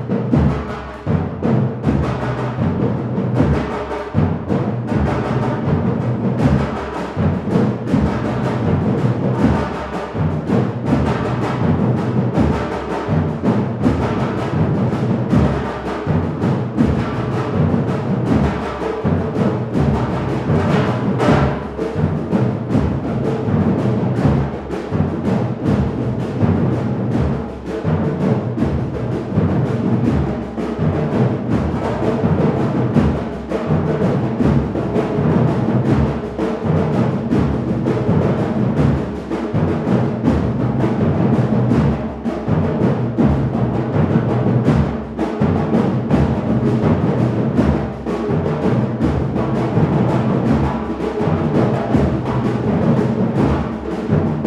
On Francia Avenue in Valparaiso, a batucada band is rehearsing inside a hangar... I record them from the entrance of the place.
18 November 2015, 17:00